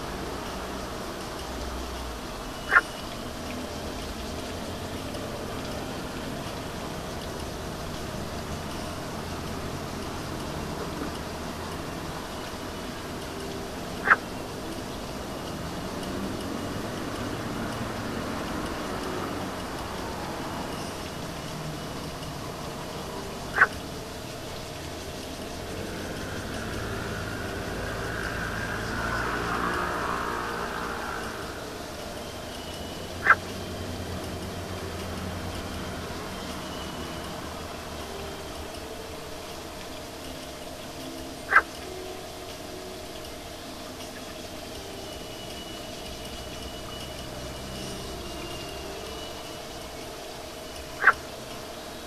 Frog at the Comma Restaurant - Frog at the Comma Restaurant, XienDian Town
XienDian Town is in the south of Taipei City. This restaurant is away from the final station of MRT XienDian line.
Xindian District, New Taipei City, Taiwan